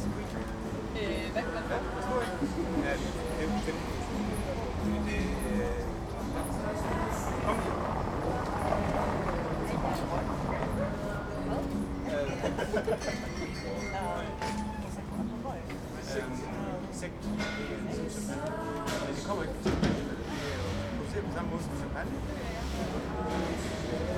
{
  "title": "planufer, pub - early evening, outside",
  "date": "2009-08-22 18:30:00",
  "description": "early evening, warm summer day, having a cold drink after an extended recording session along the former berlin wall, at a nice pub close to the landwehrkanal.",
  "latitude": "52.50",
  "longitude": "13.42",
  "altitude": "40",
  "timezone": "Europe/Berlin"
}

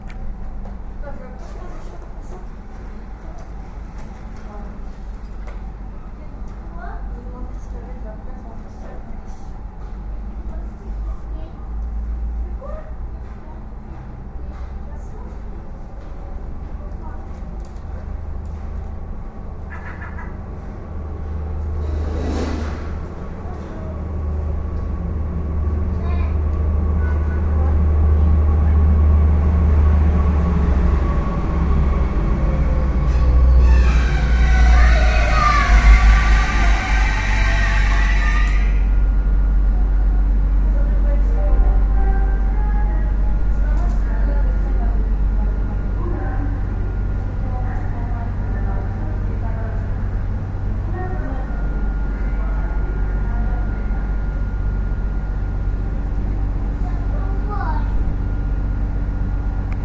{
  "title": "Gare de Boulogne Sur Mer",
  "date": "2010-07-18 13:47:00",
  "description": "World listening day\nTrain station-train arriving",
  "latitude": "50.72",
  "longitude": "1.61",
  "altitude": "11",
  "timezone": "Europe/Paris"
}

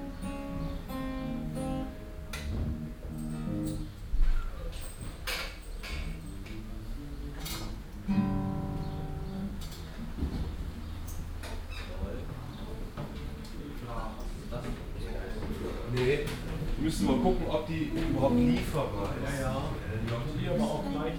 {
  "title": "cologne, grosse budengasse, music store - akust-gitarren abt.",
  "date": "2008-07-08 16:47:00",
  "description": "musik geschäft abteilung akustische gitarrren - mittags\nsoundmap nrw: social ambiences/ listen to the people - in & outdoor nearfield recordings, listen to the people",
  "latitude": "50.94",
  "longitude": "6.96",
  "altitude": "58",
  "timezone": "Europe/Berlin"
}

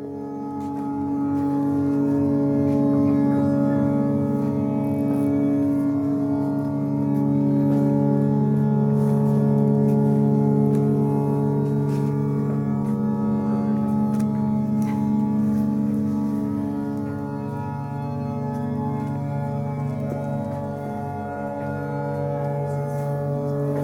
{
  "title": "Filmhuis Den Haag",
  "date": "2010-10-16 17:06:00",
  "description": "PLANE SCAPE onderzoekt de relaties tussen beeld, geluid en ruimte. De bezoeker wordt ondergedompeld in een omgeving die voortdurend een nieuwe defenitie aan de ruimte geeft. Een doolhof van duizenden rubberen elastieken, gespannen van de grond tot aan het plafond van ZAAL5, vormt een raster van verticale lijnen waarop een steeds verschuivend abstract landschap geprojecteerd wordt.",
  "latitude": "52.08",
  "longitude": "4.32",
  "altitude": "6",
  "timezone": "Europe/Amsterdam"
}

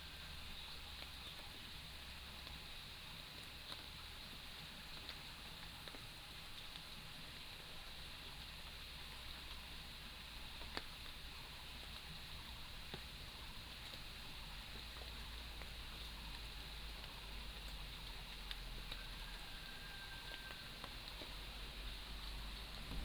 {
  "title": "茅埔坑溪生態公園, Nantou County - Next to the stream",
  "date": "2015-04-30 07:08:00",
  "description": "Raindrop, In the morning, Bird calls, Crowing soundsThe sound of water streams",
  "latitude": "23.94",
  "longitude": "120.94",
  "altitude": "470",
  "timezone": "Asia/Taipei"
}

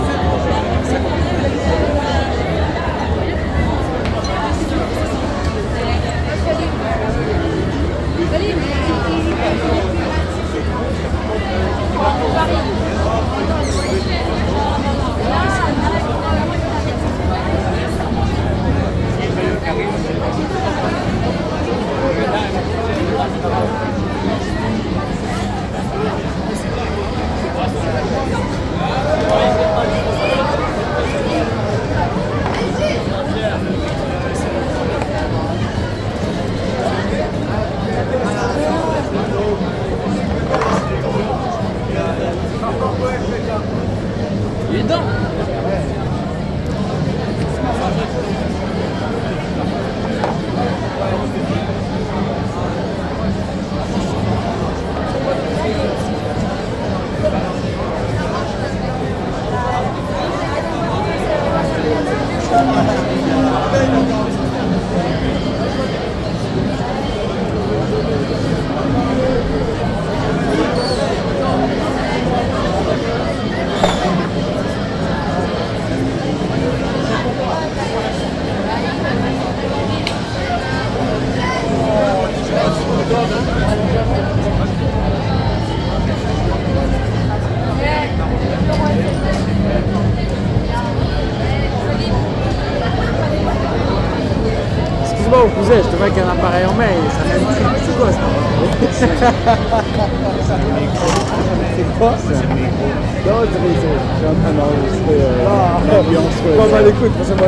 {
  "title": "Saint-Brieuc, France - art rock fest human crowd",
  "date": "2015-05-24 00:01:00",
  "description": "it's late around midnight in the Place du Chaix in Saint-Brieuc where people gather at terrasses. The place is filled with festival goers and people without tickets who wander through the city.at the end of the recording, a guy who's been spying on me comes up calling me his 'cousin' and asks what I am doing",
  "latitude": "48.51",
  "longitude": "-2.76",
  "altitude": "78",
  "timezone": "Europe/Paris"
}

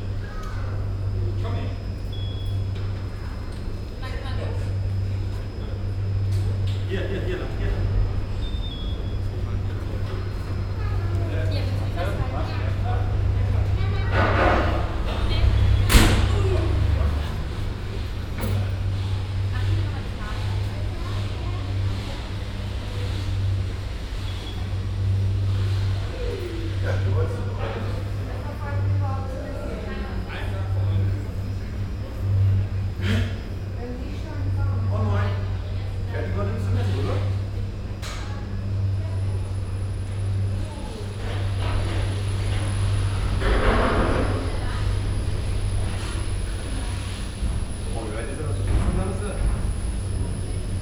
{
  "title": "cologne, riehler str, rheinseilbahn",
  "date": "2008-09-23 09:03:00",
  "description": "sonntäglicher betrieb an der kölner rheinseilbahn, stimmen, das einfahren der gondeln, türen- öffnen und schlagen, das piepen des kartenentwerters, der hochfrequentige motorenbetrieb.\nsoundmap nrw:\nprojekt :resonanzen - social ambiences/ listen to the people - in & outdoor\nsoundmap nrw: social ambiences, topographic field recordings",
  "latitude": "50.96",
  "longitude": "6.97",
  "altitude": "46",
  "timezone": "Europe/Berlin"
}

